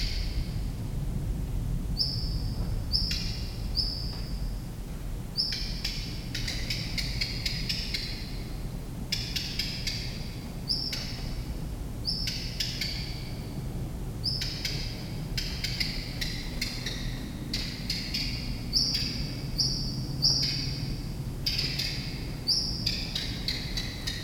Into the 'AGC Roux' abandoned factory, an angry Common Redstart, longly shouting on different places of a wide hall.